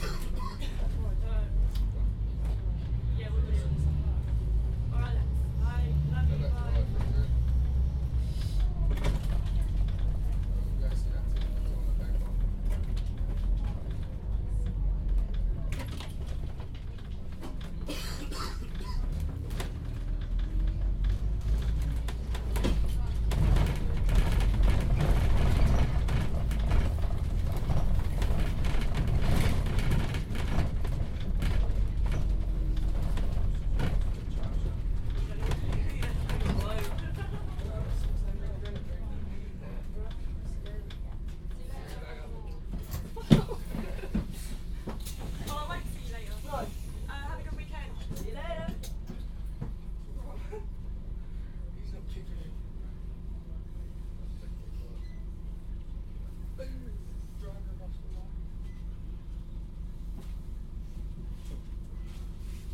Sandown, Isle of Wight, UK - Bus noises
Number 3 bus to Ryde rattling along bumpy roads, conversations, some engine noise
29 November 2013